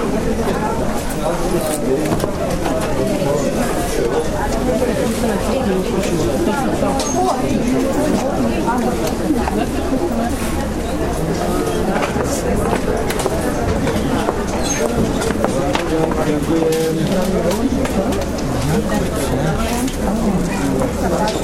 bratislava, market at zilinska street - market atmosphere VIII
9 October 2010, ~11:00